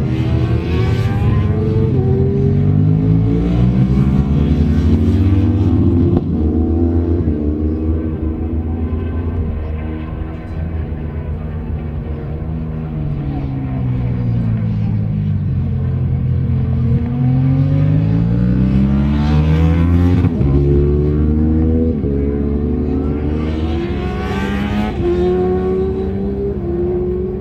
Unit 3 Within Snetterton Circuit, W Harling Rd, Norwich, United Kingdom - BSB 2001 ... Superbikes ... warm-up ...
BSB 2001 ... Superbikes ... warm up ... one point stereo mic to minidisk ... commentary ... sort of ...
7 May